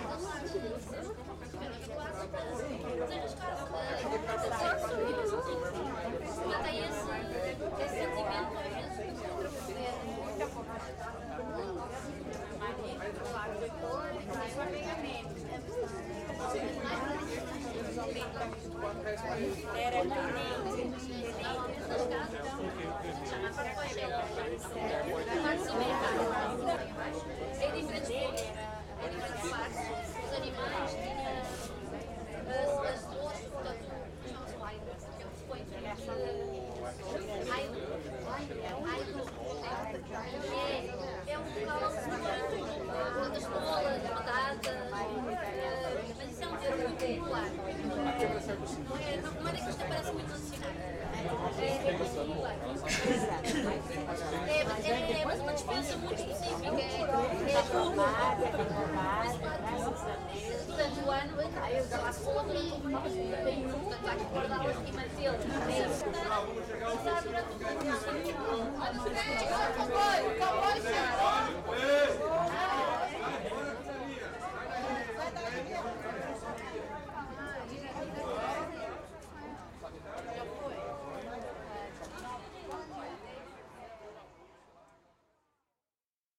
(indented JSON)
{
  "title": "Largo da Estação, Pinhão, Portugal - Estação, Pinhão, Portugal",
  "date": "2014-02-18 12:30:00",
  "description": "Estação, Pinhão, Portugal Mapa Sonoro do Rio Douro Railway Station, Pinhao, Portugal Douro River Sound Map",
  "latitude": "41.19",
  "longitude": "-7.55",
  "altitude": "87",
  "timezone": "Europe/Lisbon"
}